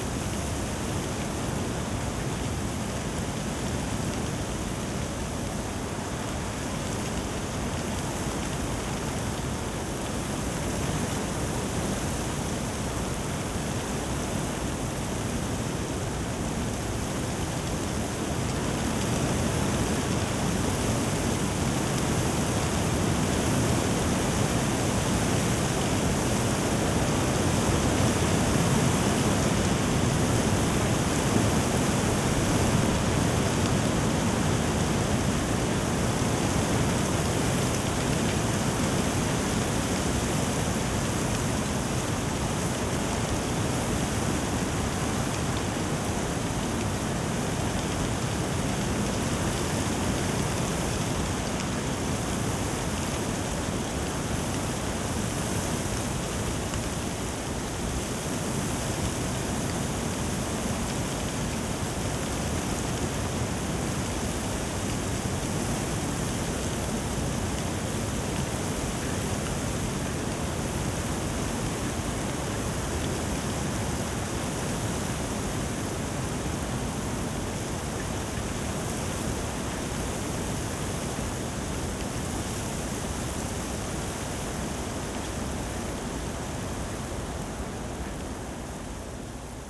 Tempelhofer Feld, Berlin, Deutschland - snow storm
heavy snow storm over Berlin today, the sound of wind and snow on dry leaves.
(SD702, AT BP4025)